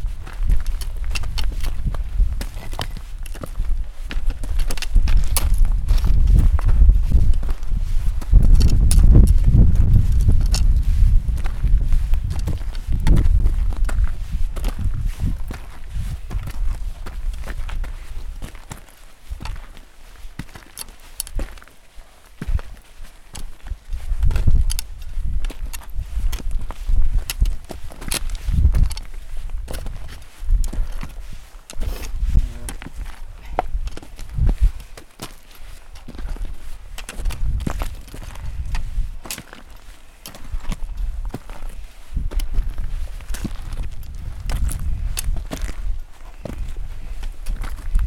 Aufstieg zum Restipass 2627 Meter
Aufstieg, eigenartige Steinformatonen, Einschlüsse von Quarz, sehr hoch alles, Wetter geeignet, gute Sicht, Archaik pur
Ferden, Schweiz, 8 July